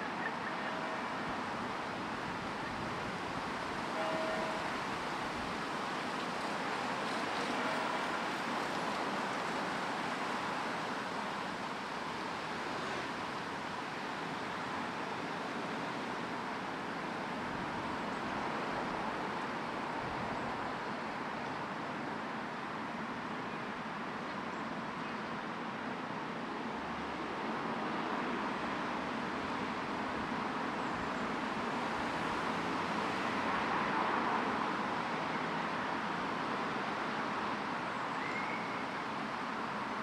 On Friday, September 9, 2022, at 12:00 pm, various church bells across the UK sounded off in tribute to the death of Her Late Majesty Queen Elizabeth ll, following her passing on September 8th, 2022.
The recording took place on the front lawn of the Lanyon Building, the main building of Queen’s University Belfast, which also brought its main flag down to half-mast position. Sounds of daily life can be heard, ranging from cyclists, pedestrians, motor and emergency vehicles, birds, pedestrian crossings, and other local sounds in the area.
The Church Bells were subtle and found gaps in the environmental soundscape to emerge and be heard. Each varied in duration, loudness, and placement in the listening experience. The bells mark a time of respect, change, and remembrance after a 70-year reign from the late Queen.
Ulster, Northern Ireland, United Kingdom, September 2022